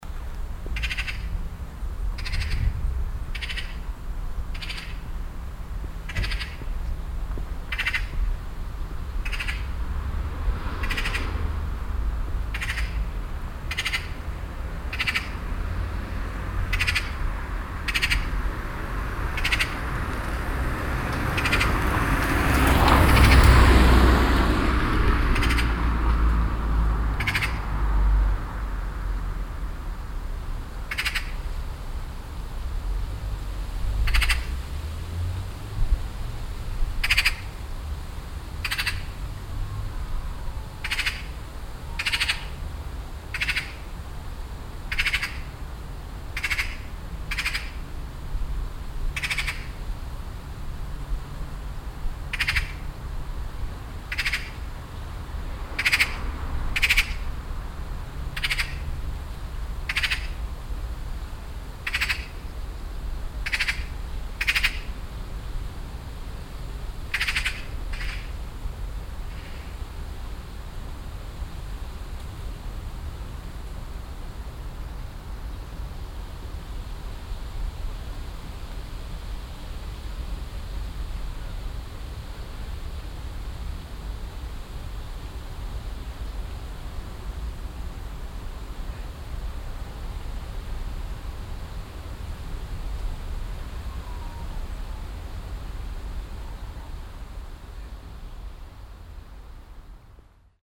cologne, weiden, rilkestrasse, elster
elster in baum in einem garten, laut signal gebend, ein pkw fährt vorbei, das rauschen des windes in den birkenbäumen
soundmap nrw - social ambiences - sound in public spaces - in & outdoor nearfield recordings